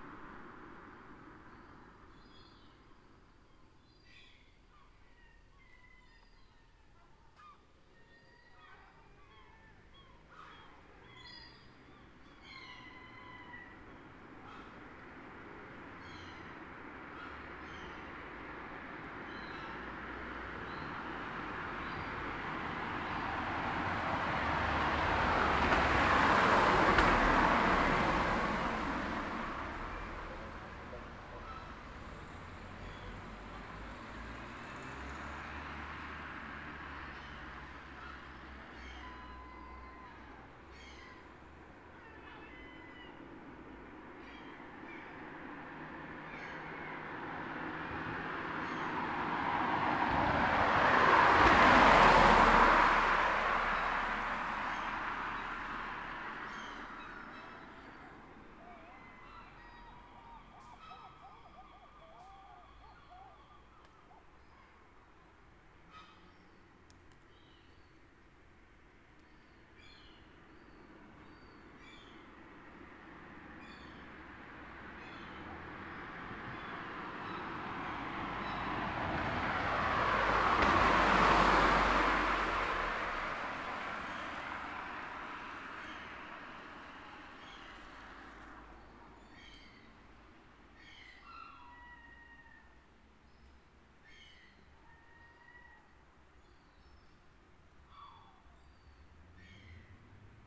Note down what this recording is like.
Standing on the pavement just as the last daylight is fading. In the trees above jackdaws are nesting and making daft noises. Somewhere amongst the rooftops behind, seagulls are nesting. You can hear the baby seagulls making a noise. A few cars pass. Tascam DR40, built-in mics.